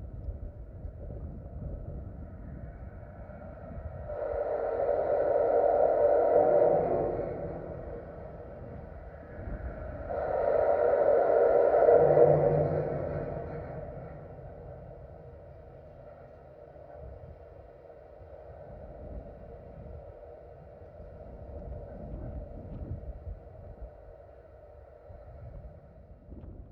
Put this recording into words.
contact mic recordings of the bridge, cars passing by, śluza i most nagrana mikrofonami kontaktowymi